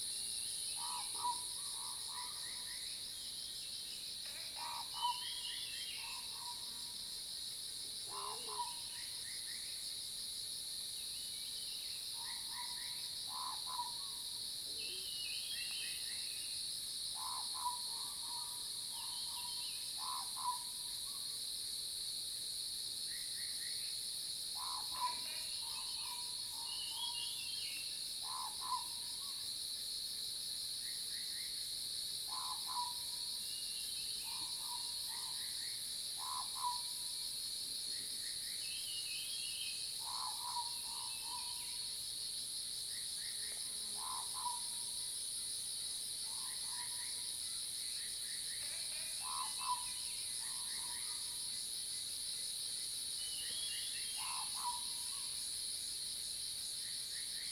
Hualong Ln., Yuchi Township南投縣 - Bird calls and Cicadas cry
Bird calls and Cicadas cry, Frog sounds
Zoom H2n MS+XY
Nantou County, Yuchi Township, 華龍巷43號